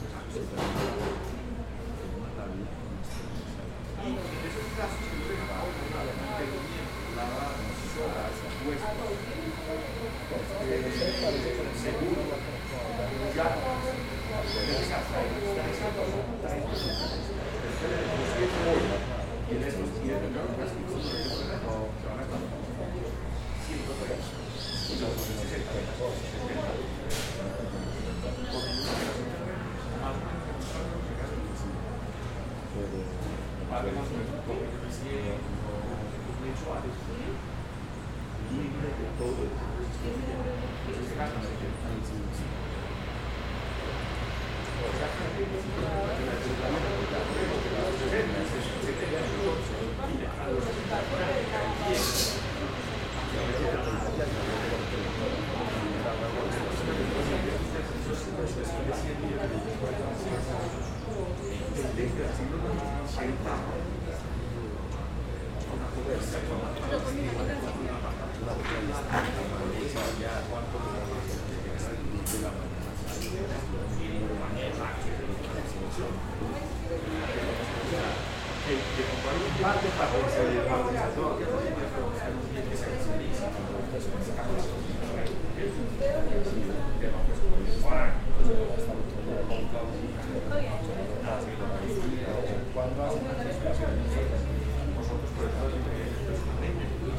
Cra., Sabaneta, Antioquia, Colombia - Café Zendaya Studio
Café Zendaya Studio en la parte de afuera, en un día nublado.
Sonido tónico: Conversaciones, pájaros.
Señal sonora: Batidoras, licuadoras, tazas.
Se grabó con una zoom H6, con micrófono XY.
Tatiana Flórez Ríos - Tatiana Martínez Ospino - Vanessa Zapata Zapata